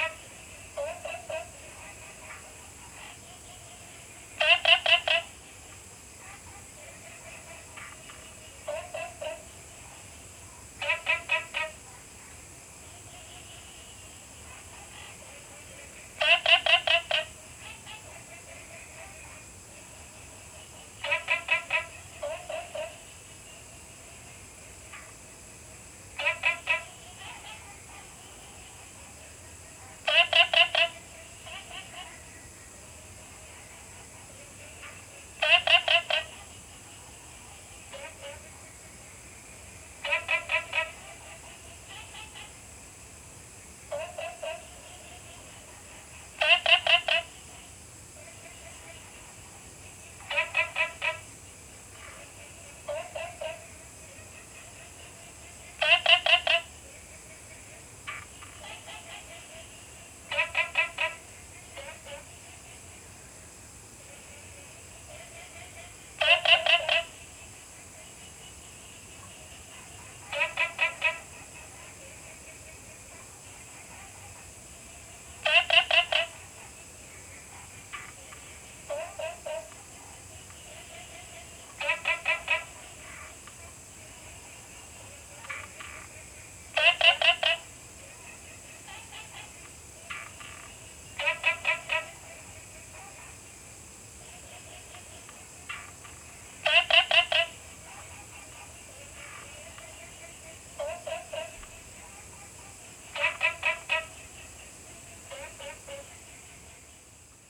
青蛙ㄚ 婆的家, Puli Township - In Bed and Breakfasts
Frog calls, Insect sounds
Zoom H2n MS+XY